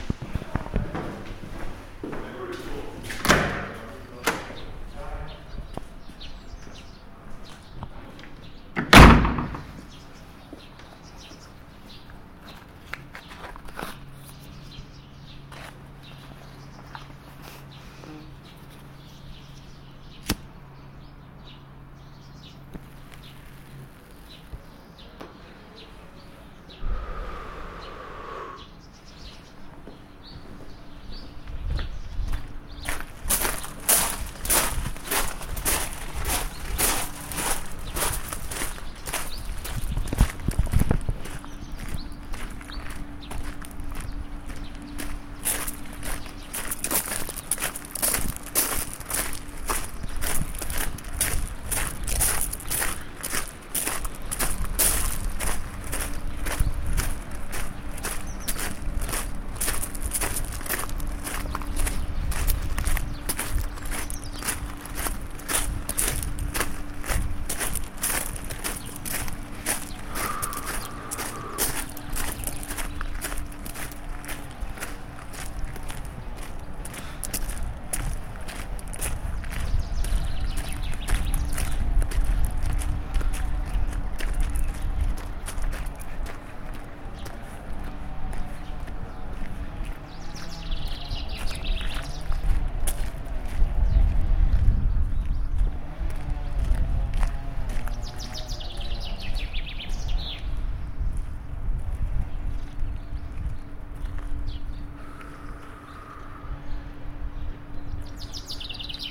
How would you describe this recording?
Escaping all the people and noise, go for a walk by yourself and enjoy the voice of the nature and of cause: new beers being delivered!